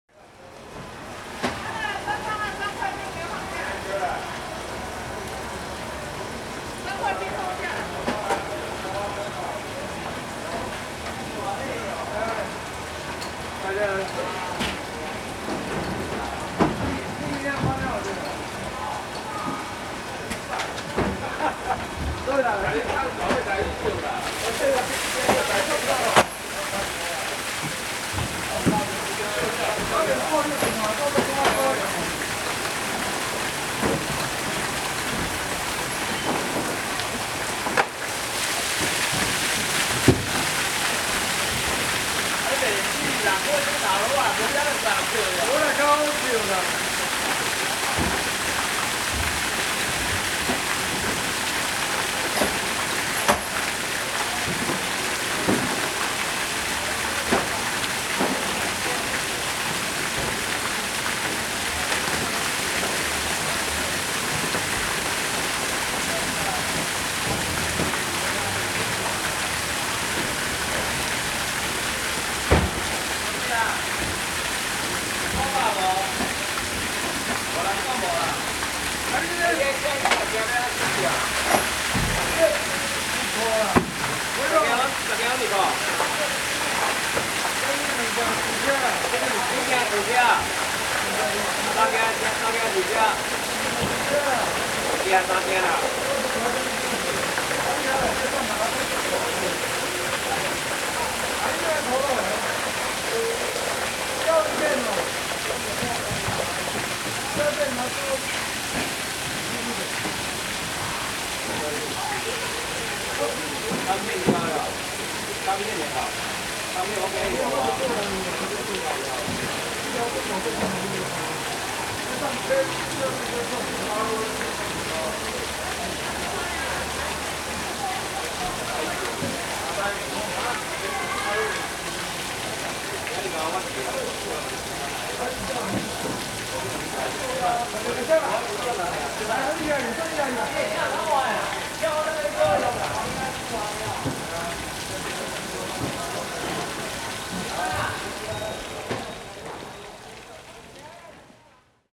Fruits and vegetables wholesale market
Sony Hi-MD MZ-RH1 +Sony ECM-MS907
8 March 2012, 05:44